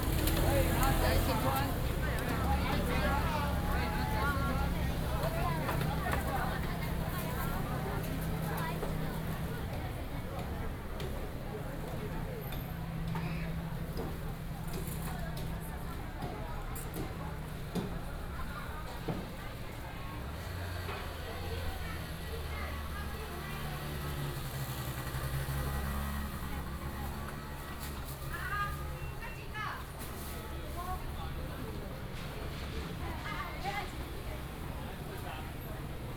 traditional markets, vendors selling sound
Sicun Rd, 后里-第一公有零售市場, 22 January